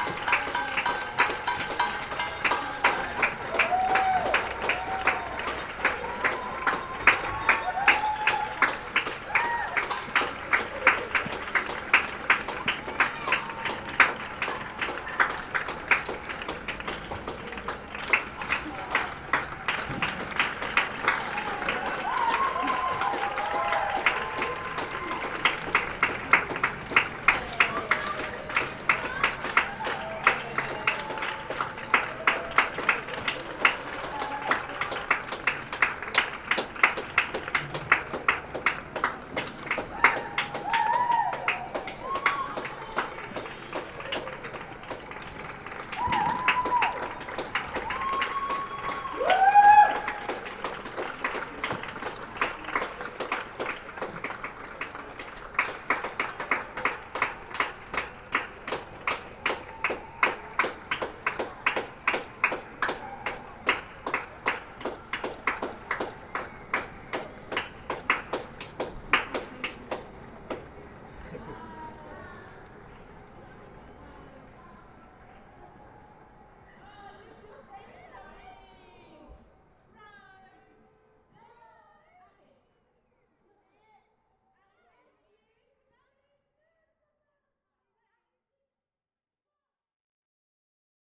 158 Tudor Road - Clapping In Support Of NHS Workers 8pm - 02.04.20, Tudor Road, Hackney, London.
The whole country went outside at 8pm this evening (and the same time last Thursday) to clap and make some noise in support of workers in the National Health Service. With many thanks and love to all who are risking their own health and lives to take care of those amongst us who are sick during this time of Pandemic.